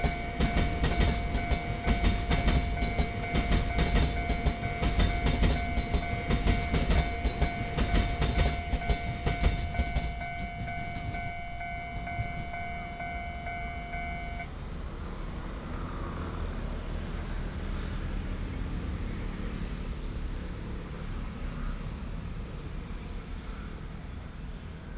Mingde St., Huatan Township - in the railroad crossing
in the railroad crossing, The train runs through
Changhua County, Taiwan, 18 March